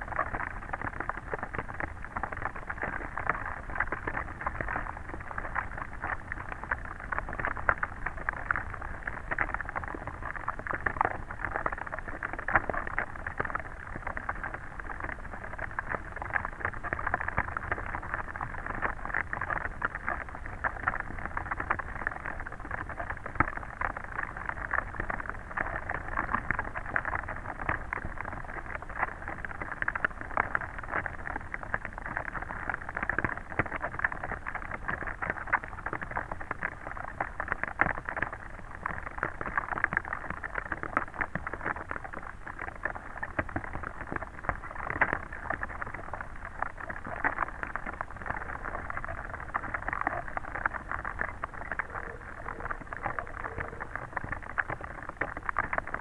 Kuktiškės, Lithuania, swampy lake
Hydrophone in the swampy lake